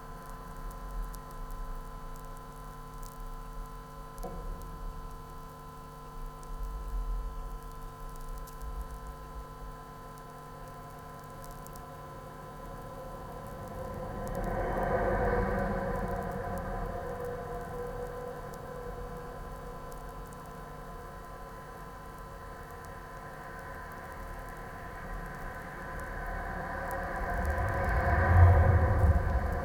study of abandoned railway bridge over the highway. contact microphones on the rails and electromagnetic antenna Priezor for the electro field